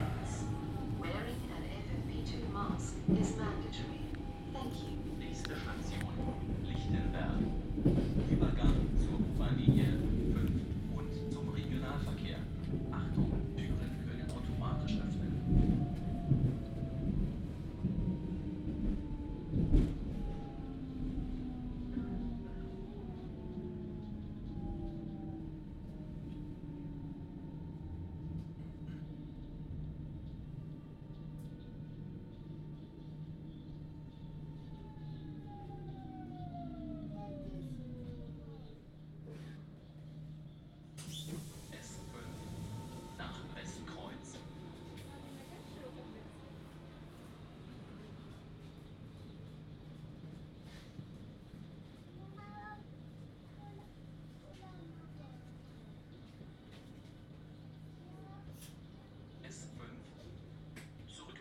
Buchberger Str., Berlin, Deutschland - S5 Recording, Station Lichtenberg
This recording was done inside the S5, with a zoom microphone. The recording is part of project where i try to capture the soundscapes of public transport ( in this case a train)